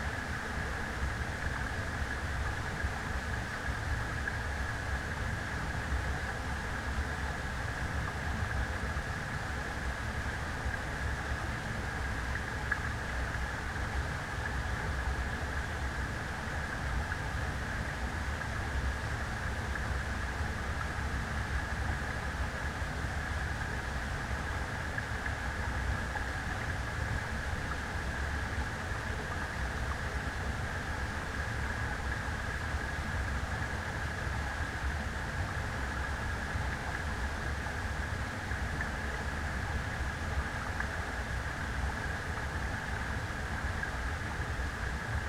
Lithuania, Pakalniai dam explored
4 tracks: 2 omnis and 2 hydros on the dam
10 July 2017, 12:25